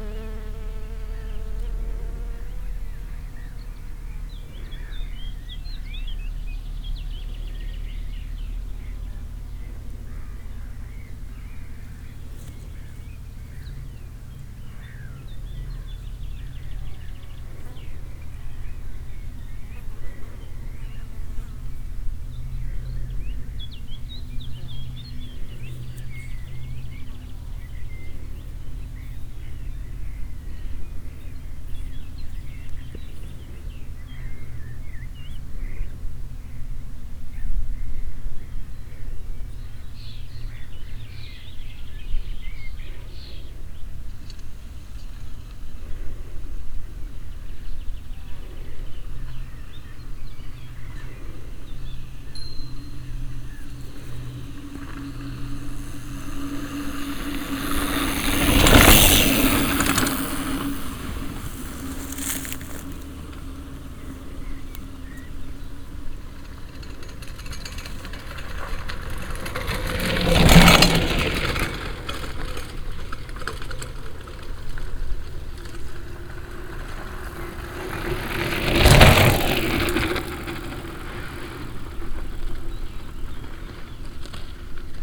Morasko, Krakowiakow i Gorali road - break during a bike trip
taking a break during a bike trip on a field road leading to the back of the municipal landfill. very mellow, hot afternoon. rural area ambience. having a snack do some sounds of chewing can be heard. three bikers swooshing by.
Poznań, Poland, 24 May, 13:06